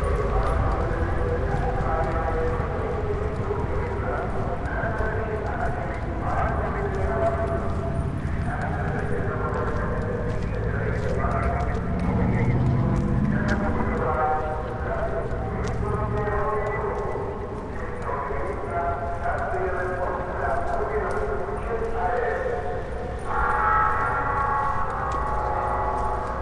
1.may in polabiny
1.may trip in polabiny pardubice
Severovýchod, Česko, European Union